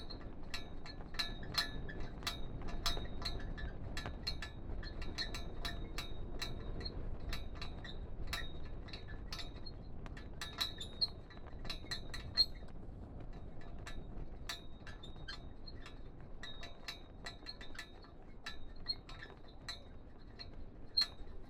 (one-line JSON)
{"title": "Castle Flag, R. do Castelo, Marvão, Portugal - Castle Flag", "date": "2019-06-15 15:48:00", "description": "Castle flag on the wind", "latitude": "39.40", "longitude": "-7.38", "altitude": "850", "timezone": "Europe/Lisbon"}